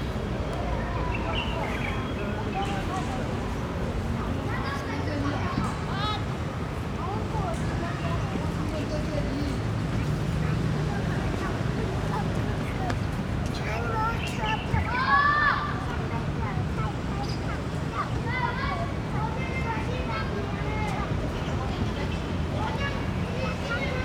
in the Park, Children Playground, Birds singing, Traffic Sound
Rode NT4+Zoom H4n
Sanchong District, New Taipei City, Taiwan, 15 March 2012